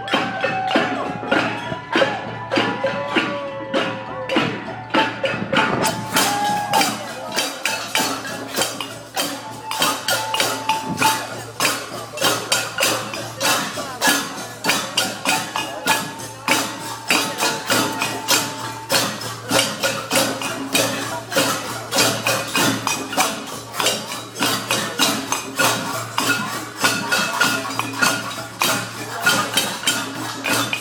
Le Plateau-Mont-Royal, Montréal, QC, Canada - #loi78 #manifencours
#manifencours 20:00 - 20:15 bruit contre la loi78